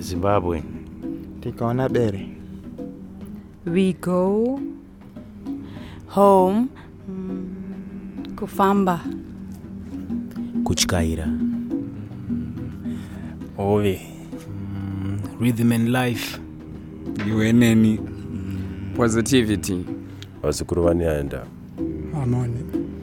Zimbabwe German Society, Milton Park, Lawson Ave - audience-impromptu-2-11-13
What you are listening to is an impromptu sound piece produced by the audience of a workshop event at the Goethe Zentrum/ German Society in Harare. We edited it together in the open source software Audacity and uploaded the track to the All Africa Sound Map.
The workshop was addressing the possibilities of sharing multimedia content online and introducing a forthcoming film- and media project for women in Bulawayo.
The track is also archived here: